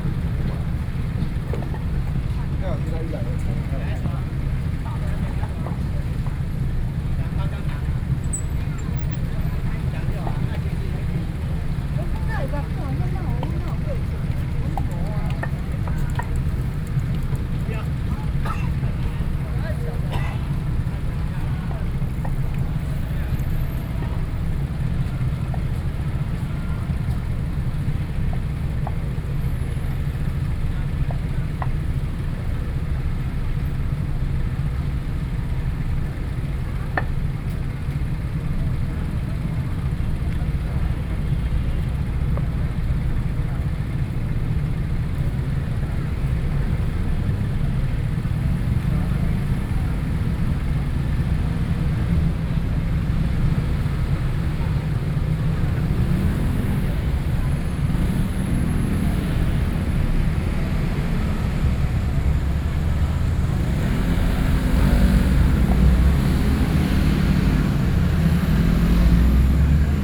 Zhongshan S. Rd., Taipei City - Intersection
Sitting opposite roadside diner, Far from protest activities, The crowd, Traffic Noise, Sony PCM D50 + Soundman OKM II